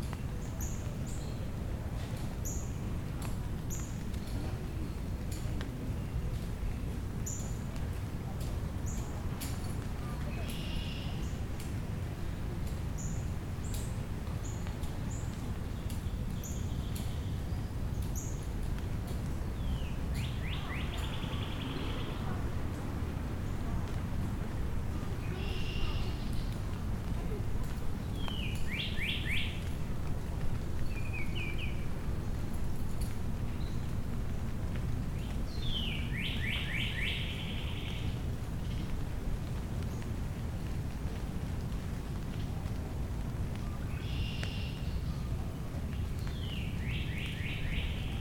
Glen Cedar Bridge - Glen Cedar Bridge after rain
Recorded (with Zoom H5) on the Glen Cedar pedestrian bridge. Some rain can be heard falling from the trees.